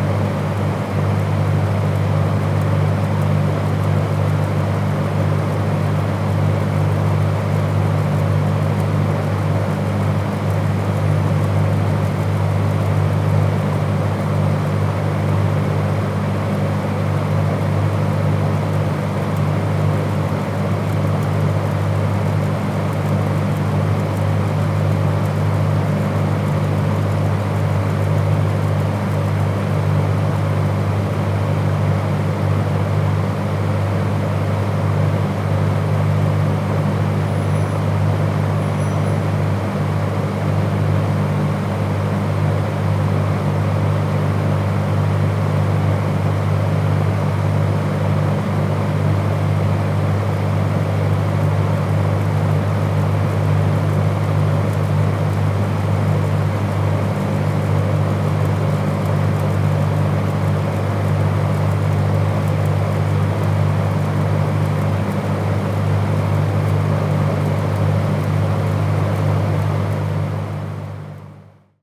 Nida, Lithuania - Marijos Church Exterior
Recordist: Ribbet Malone
Description: Outside the Marijos Church. Mostly ventilation noise. Recorded with ZOOM H2N Handy Recorder.